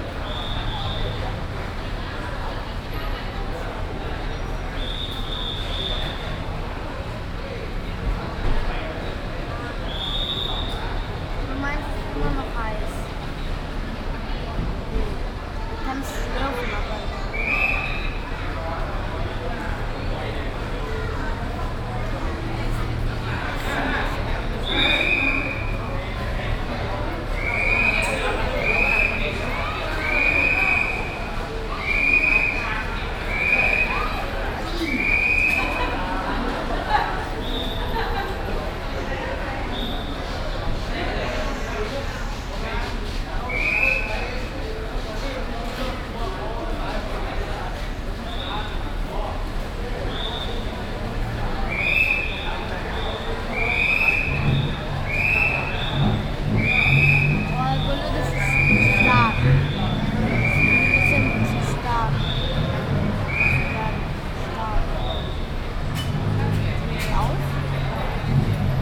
Rong Mueang Rd, Khwaeng Rong Muang, Khet Pathum Wan, Krung Thep Maha Nakhon, Thailand - Taxistand am Huang Lampong-Bahnhof in Bangkok
The atmosphere of traffic, people and the whistling of the taxi warden in the nicely reverberating front hall of the Huang Lampong train station in Bangkok, while waiting for the early morning train to Surathani to leave, my and my 2 sons with coffee, hot chocolate and pastry.
5 August 2017, 06:45